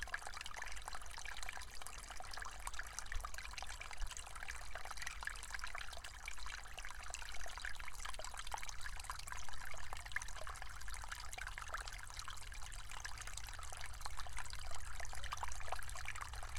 Vyžuonos, Lithuania, streamlet and distant traffic
you cannot hide from the traffic lows...